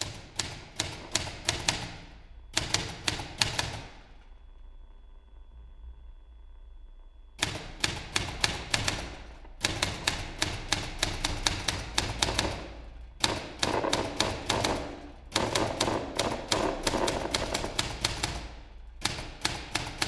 desk, mladinska, maribor - typewriter and radio
writing ”the future” text, few minutes fragment ...